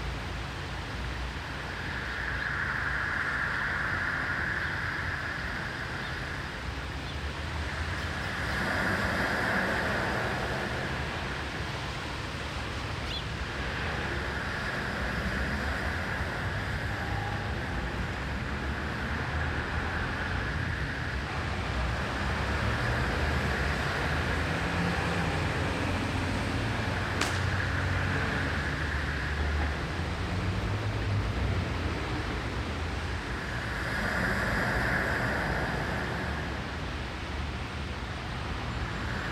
Nauener Platz in Berlin was recently remodeled and reconstructed by urban planners and acousticians in order to improve its ambiance – with special regard to its sonic properties. One of the outcomes of this project are several “ear benches” with integrated speakers to listen to ocean surf or birdsong.